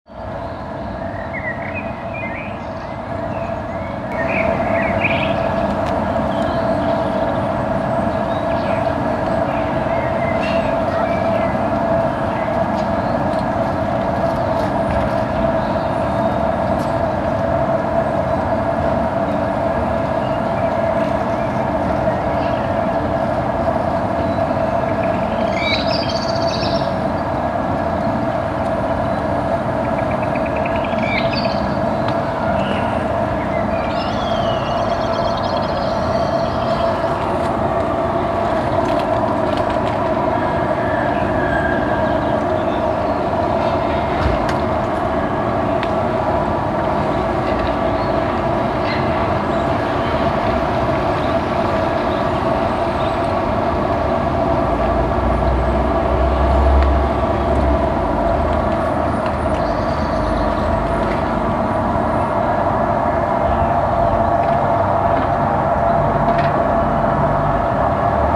lüftungsresonanten zwischen zwei gebäuden, morgens im frühjahr 07
soundmap nrw:
social ambiences, topographic fieldrecordings, listen to the people
von eigen strasse